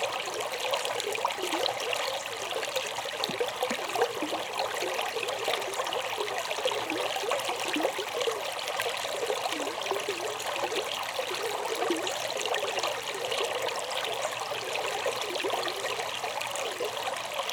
Lost Maples State Park, TX, USA - Lost Maples - Can Creek Pipe
Recorded with a pair of DPA 4060's and a Marantz PMD661
Vanderpool, TX, USA